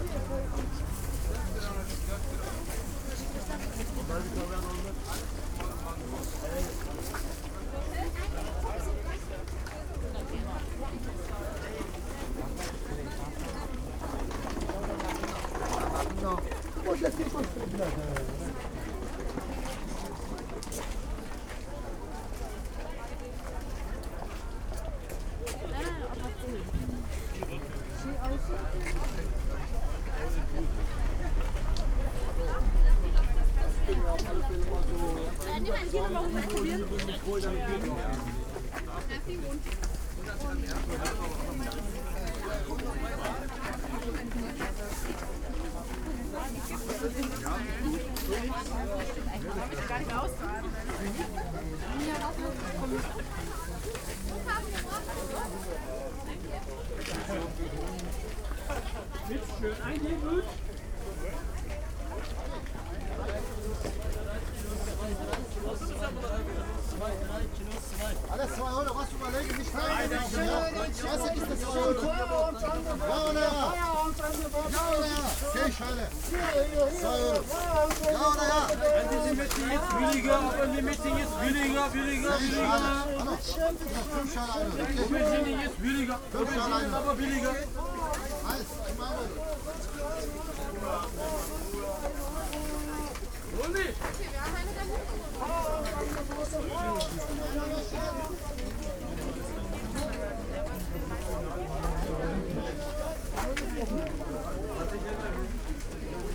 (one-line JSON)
{"title": "Maybachufer, weekly market - market walk", "date": "2012-03-02 17:10:00", "description": "walk through crowded market. pipe player the entrance. the hour before it closes, many people come here to get cheap fruits and vegetables.\n(tech: SD702 DPA4060 binaural)", "latitude": "52.49", "longitude": "13.42", "altitude": "38", "timezone": "Europe/Berlin"}